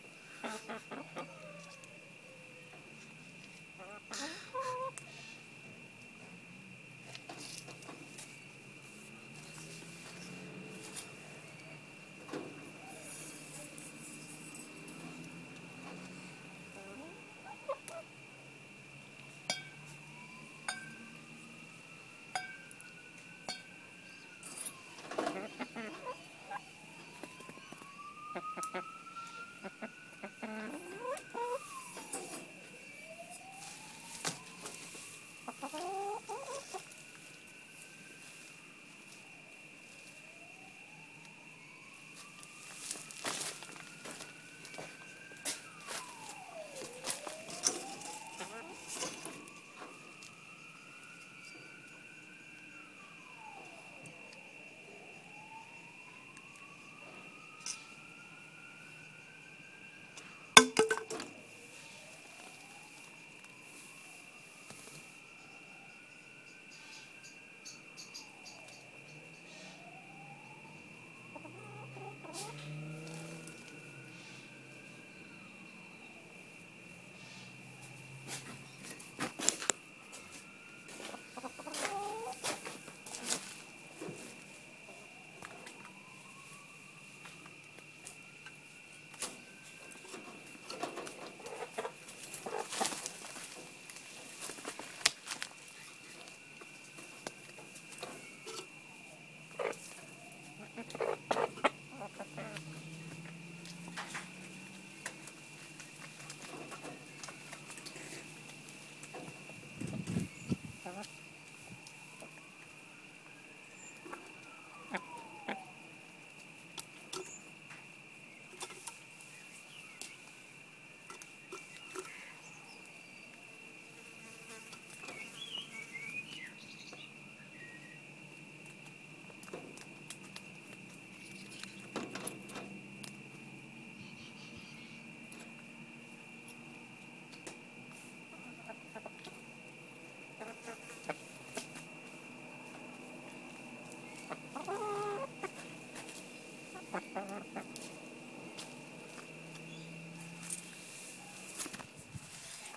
Beulah Park SA, Australia - Two Chickens
Two chickens at sunset. Recorded on iphone.
24 December